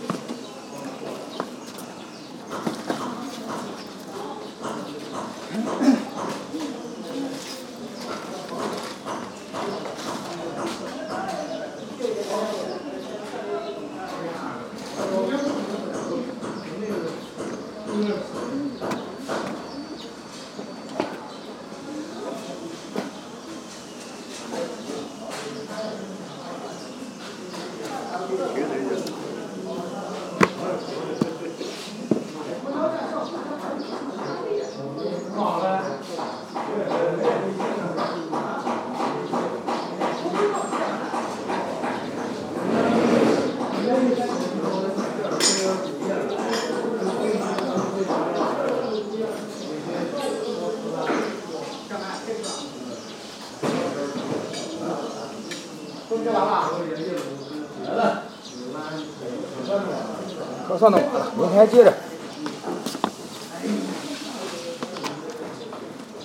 White cloud temple, Bai Yun Guan Jie, Xicheng Qu, Beijing Shi, China - Coups de bol au chantier : tribute to Eric La casa
Mixture of Tibetan bowl and Work in Progress sounds in a Taoist temple. Between a sacred and profane music, something in between, between listening and not listening. recorder : pcm-10 Sony